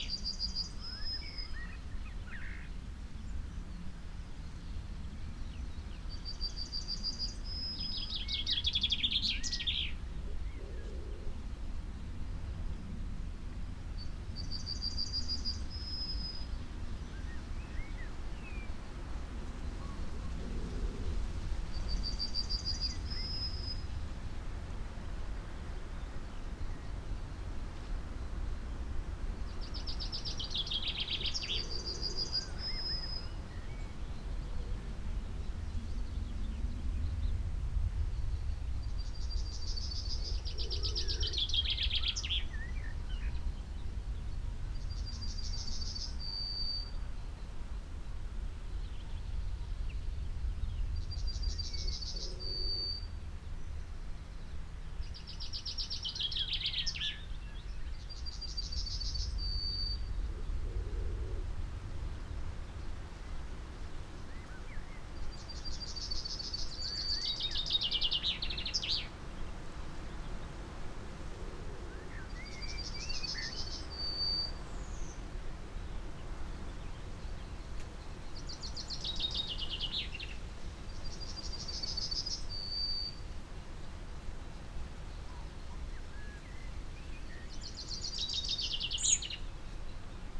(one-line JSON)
{"title": "Malton, UK - five singers in a tree ...", "date": "2022-06-07 05:10:00", "description": "five singers in a tree ... xlr sass on tripod to zoom h5 ... bird song from ... chaffinch ... whitethroat ... chiffchaff ... yellowhammer ... blackbird ... plus song and calls from ... wren ... skylark ... wood pigeon ... crow ... linnet ... jackdaw ... linnet ... pheasant ... quite blustery ... background noise ...", "latitude": "54.12", "longitude": "-0.54", "altitude": "85", "timezone": "Europe/London"}